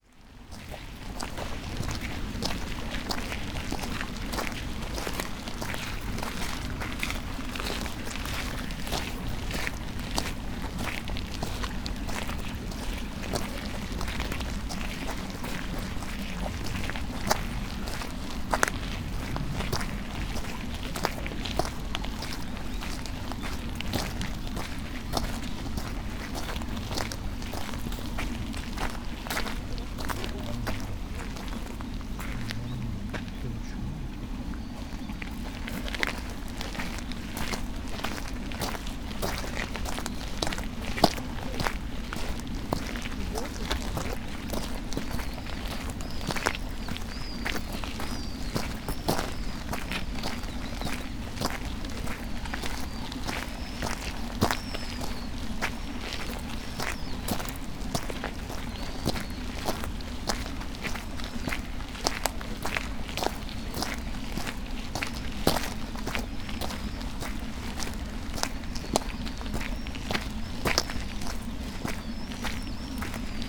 near water canal
rhythm of steps
Kyōto-shi, Kyōto-fu, Japan